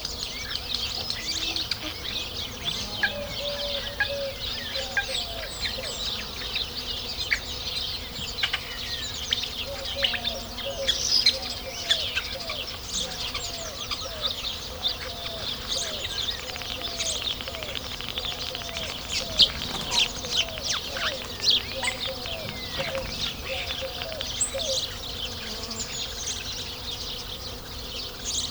Morille, Spain - Birds at daybreak
Morille (salamanca, ES) Countryside birds, daybreak, mono, rode NTG3, Fostex FR2 LE
Buenavista, Spain, 14 August 2011